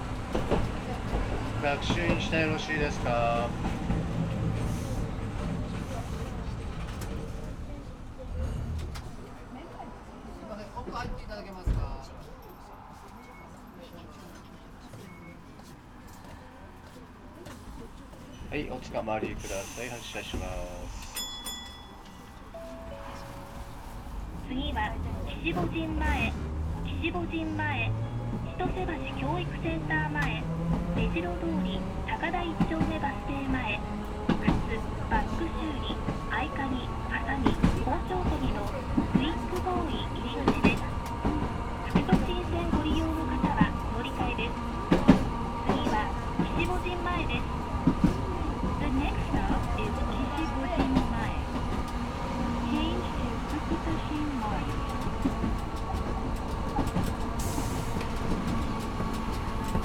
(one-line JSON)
{"title": "Tokyo, Nishiwaseda district, Toden Arakawa Line - ride on the only tram line in tokyo", "date": "2013-03-28 17:54:00", "description": "the tram was an rather old, small car. operated manually with a lever, rolling noisily across the city. conversations of passengers, announcements from the speakers (on all public transport in Japan announcements are made by the driver with a headset, along with the prerecorded massages), creaking and swishes of the car, street noise.", "latitude": "35.71", "longitude": "139.71", "altitude": "14", "timezone": "Asia/Tokyo"}